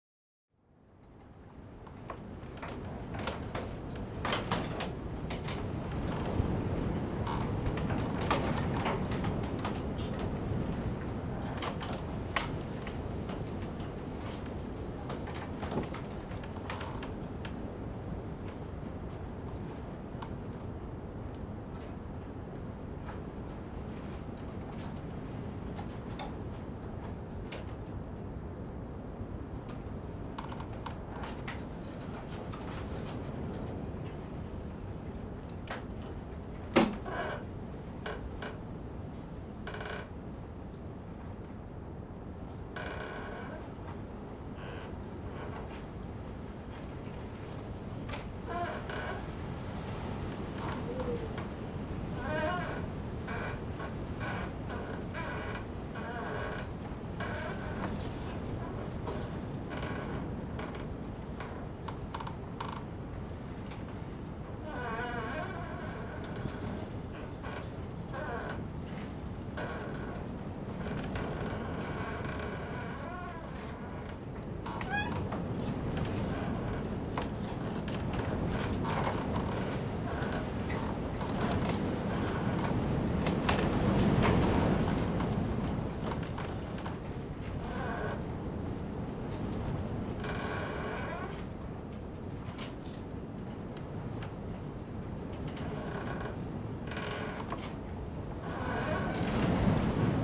Fläsch, Schweiz - Wind in einem Holzschopf
WIND, KNARREN, AUTOPASSAGE
NOVEMBER 1998
Fläsch, Switzerland, 14 November 1998